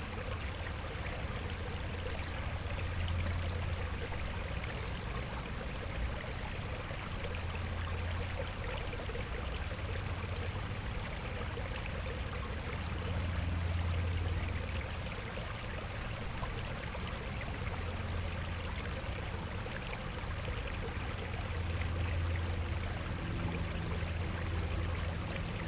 water drain runoff, Austin TX
small water drainage from a runoff channel